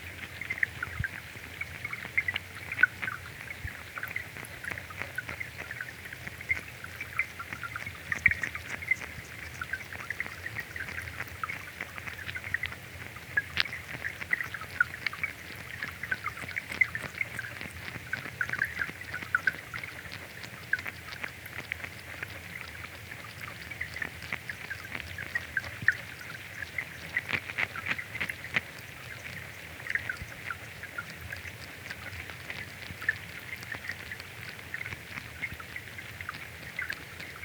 Walking Holme Tadpoles
Shallow water at the edge of Digley Reservoir. Thousands of wriggling tadpoles.